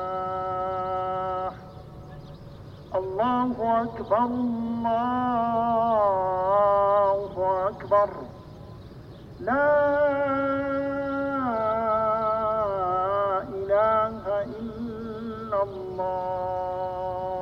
Désert du Barhain - Route 5518
Mosquée BRAMCO
Appel à la prière de 18h23
المحافظة الجنوبية, البحرين, 24 May 2021